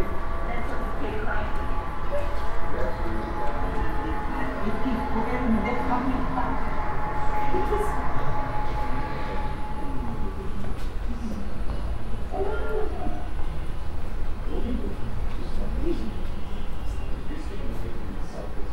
Centre Pompidou, Paris. Paris-Delhi-Bombay...
A soundwalk around the Paris-Delhi-Bombay... exhibition. Part 2